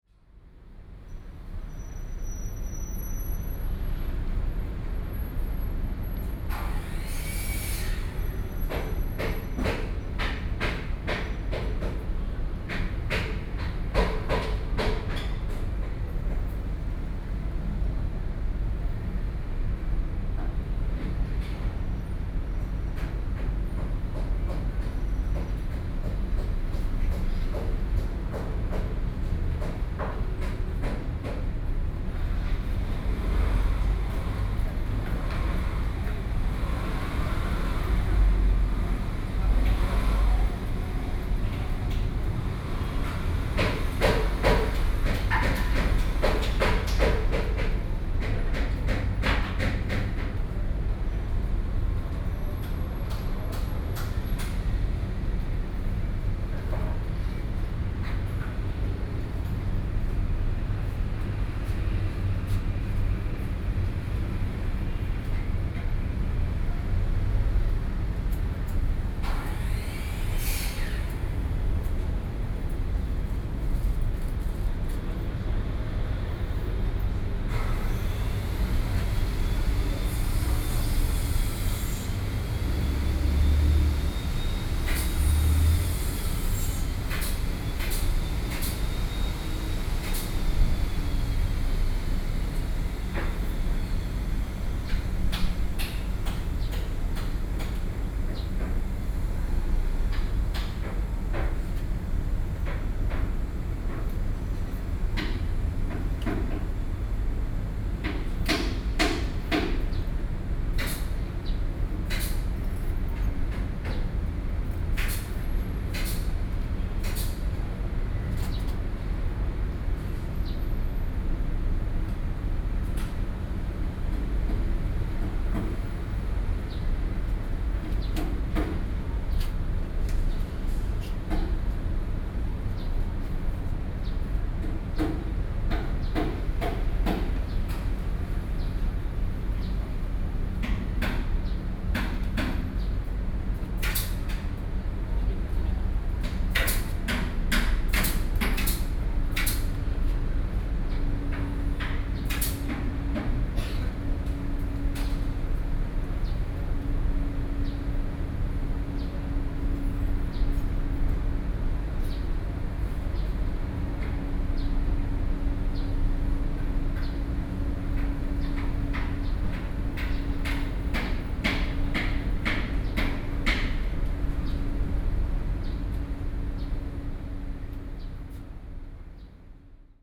Taipei City, Taiwan - Construction beat sound
Construction beat sound, Sony PCM D50 + Soundman OKM II